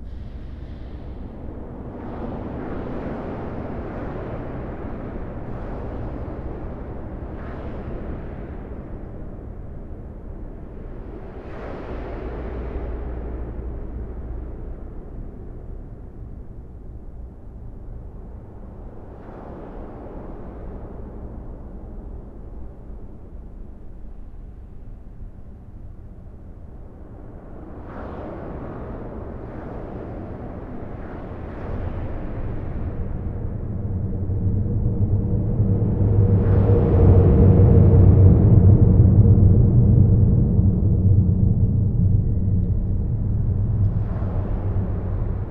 Hayange, France - Inside the bridge
Inside the concrete viaduct overlooking the town of Hayange. The box-girder bridge looks like a large sloping tunnel, in which the noise of the truck is reflected.